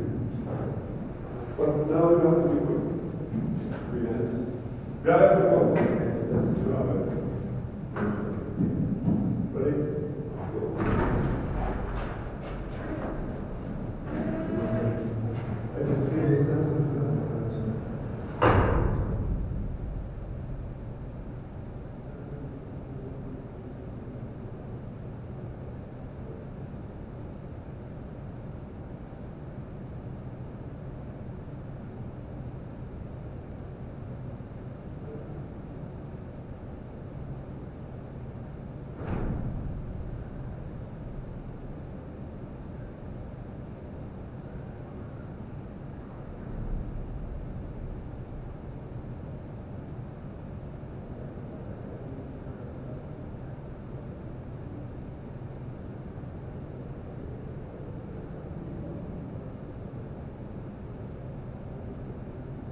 Svalbard vault - transverse tunnel vault doors closes
Doors to all three seed vaults closing and opening in the transverse tunnel at the end of the tunnel complex.
27 February